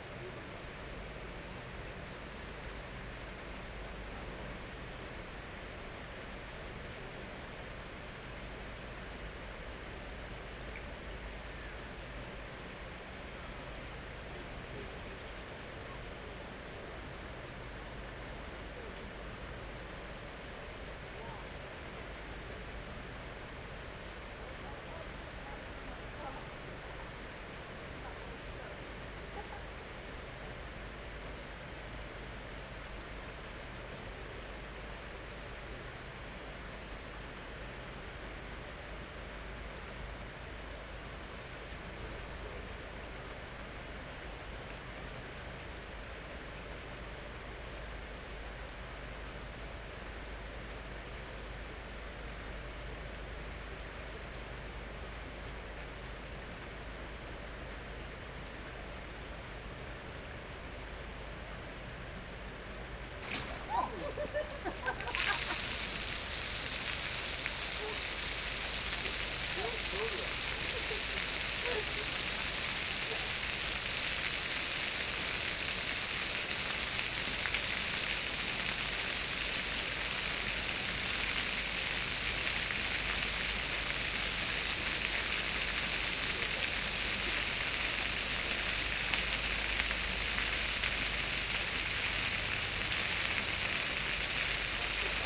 Alnwick, UK - Torricelli ... water sculpture ...
Alnwick gardens ... Torricelli by William Pye ... an installation that shows hydrostatic pressure ... starts at 01:10 mins ... finishes 05:30 ... ish ..? lavalier mics clipped to baseball cap ...